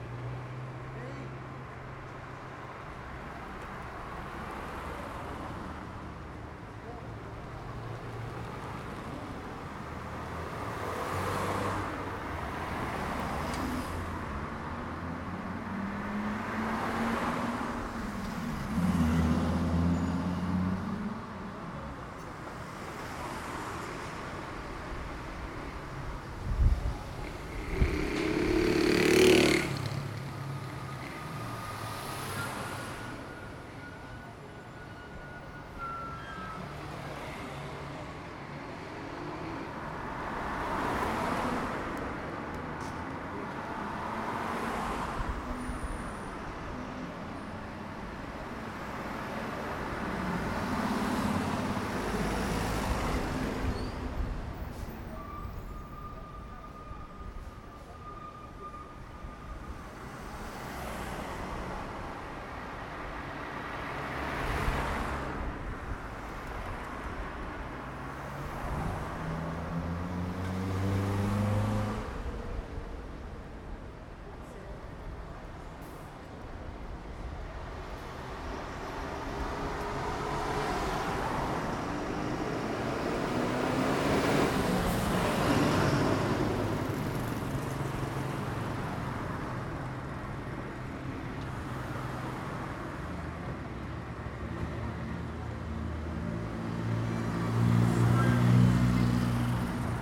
Av. Antônio Afonso de Lima - Vila Lima I, Arujá - SP, 07432-575, Brasil - avenida em Aruja
captação estéreo com microfones internos
Arujá - SP, Brazil